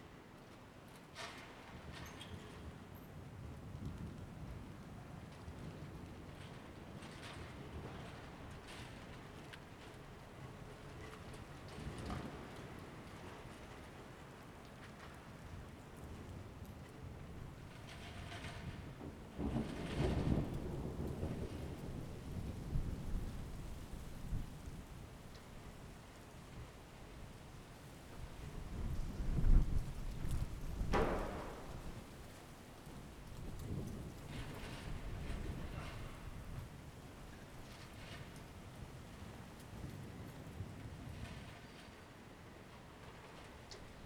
Ascolto il tuo cuore, città. I listen to your heart, city. Several chapters **SCROLL DOWN FOR ALL RECORDINGS** - Night on terrace storm under umbrella
"Night on terrace storm under umbrella" Soundscape
Chapter VXXVII of Ascolto il tuo cuore, città, I listen to your heart, city
Monday, August 24stth 2020. Fixed position on an internal terrace at San Salvario district Turin, five months and fourteen days after the first soundwalk (March 10th) during the night of closure by the law of all the public places due to the epidemic of COVID19.
Start at 02:17 a.m. end at 02:36 a.m. duration of recording 18'57''.